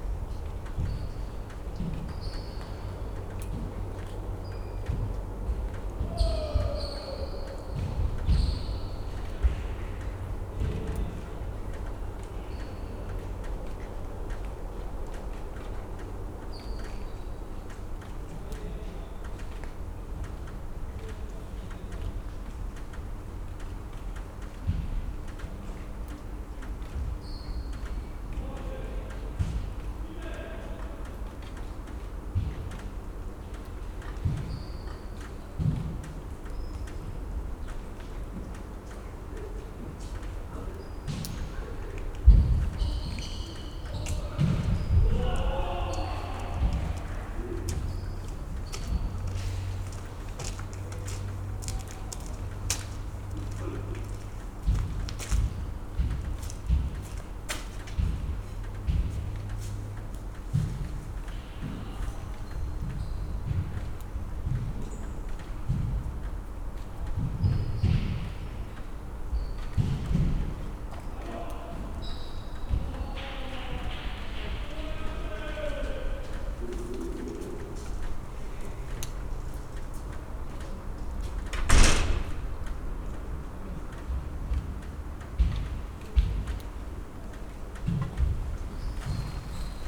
in front of the sports hall / gymnasium at Ziherlova ulica, late evening.
(Sony PCM D50, DPA4060)
Ljubljana, Slovenia, 5 November 2012